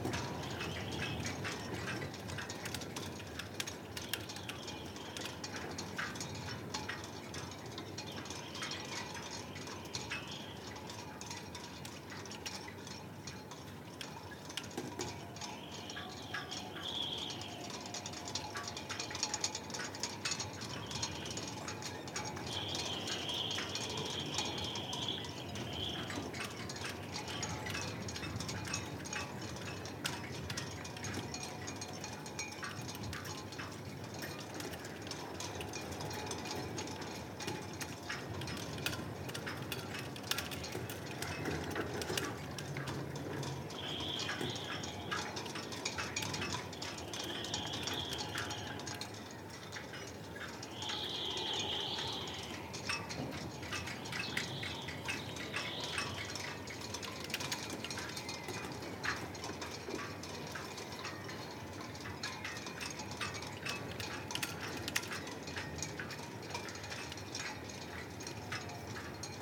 Rye Harbour, Icklesham, East Sussex, UK - Masts and sail cables blowing in the wind
This is the sound of sail cables clanging against masts at Rye Harbour. It was one of those super cold but bright, brisk days and the wind was up. You can hear little devices on the masts - clips and d-hooks etc. - being battered about, and some stuff on the floor being moved by the wind. It took me a while to find a nook where the wind wasn't going directly through my windshield and onto the mics but eventually I found a little place where I could lean in and somehow shelter the EDIROL R-09 from the worst of the gusts. It's still a windy recording, but then it was a windy day. I could have stood and listened for hours.
1 February 2015, 12:10